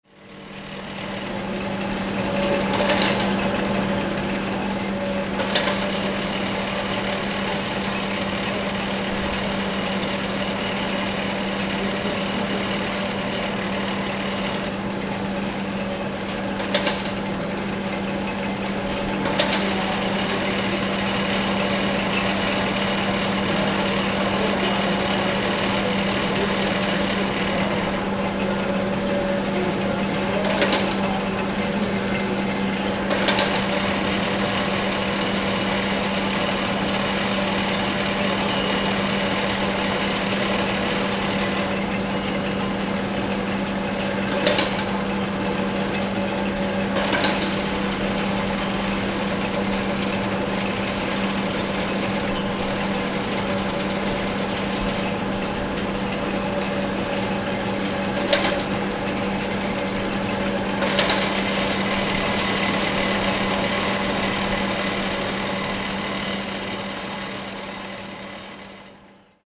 Saint-Sulpice-sur-Risle, France - Manufacture Bohin
Son d'une machine à la manufacture Bohin, Zoom H6, micros Neumann
5 March 2014